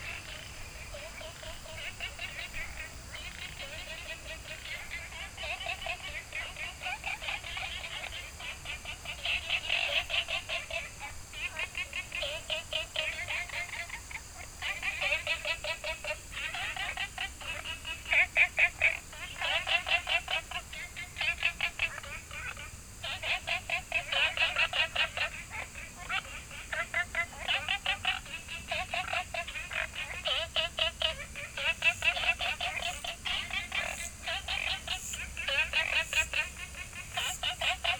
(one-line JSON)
{"title": "青蛙阿婆ㄟ家, 埔里鎮桃米里, Taiwan - Walking in the bush", "date": "2015-09-03 20:32:00", "description": "Ecological pool, Frog chirping, Insect sounds, walking In Bed and Breakfasts", "latitude": "23.94", "longitude": "120.94", "altitude": "463", "timezone": "Asia/Taipei"}